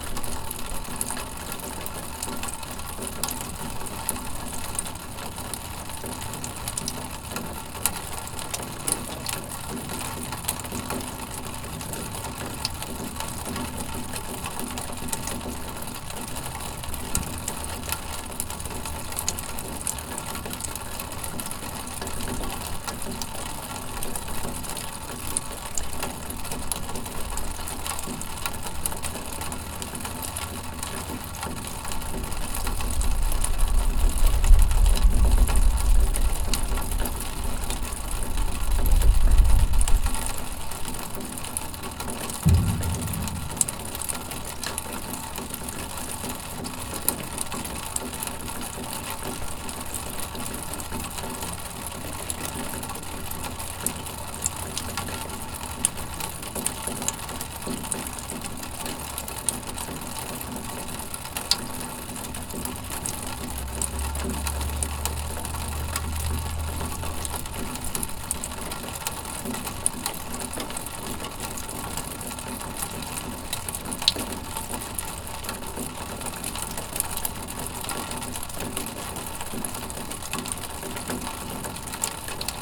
Galena St, Prairie Du Sac, WI, USA - Snowmelt
Water from melting snow running down an aluminum downspout in early December. Recorded with a Tascam DR-40 Linear PCM Recorder.
2 December 2018, ~11:00